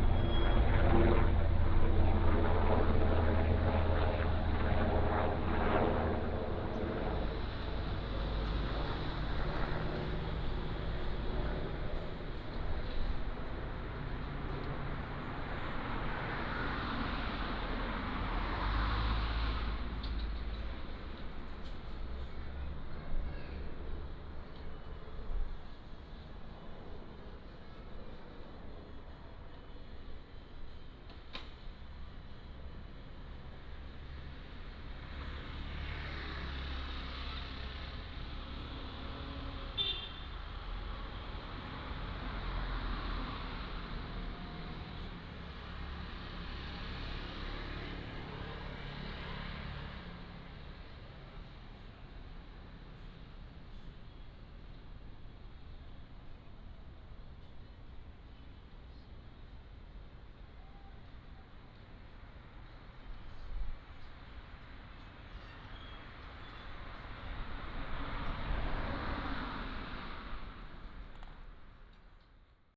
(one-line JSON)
{"title": "尖豐公路, Sanyi Township, Miaoli County - At the corner of the road", "date": "2017-02-16 11:45:00", "description": "At the corner of the road, Traffic sound, Helicopter flying through", "latitude": "24.42", "longitude": "120.77", "altitude": "263", "timezone": "GMT+1"}